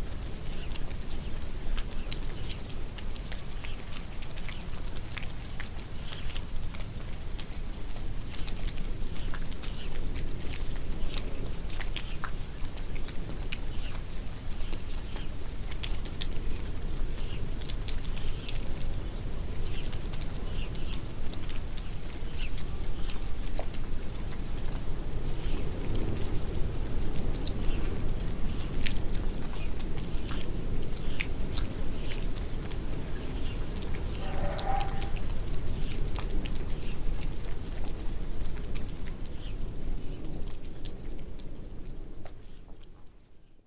Vebron, France - Endless rain
Rain doesn't stop. I wait in a refuge, as it's lenghty, it's cold and I am soaked.